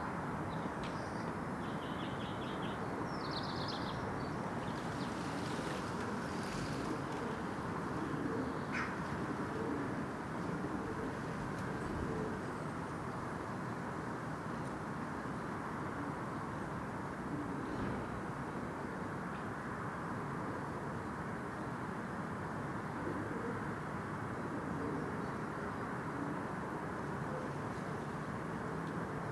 Contención Island Day 68 inner northwest - Walking to the sounds of Contención Island Day 68 Saturday March 13th
The Poplars High Street Elmfield Road North Avenue
Green and blue
bins in a back-alley
Ivy drapes the wall
Pigeons peck at the cobbles
two display
one is lame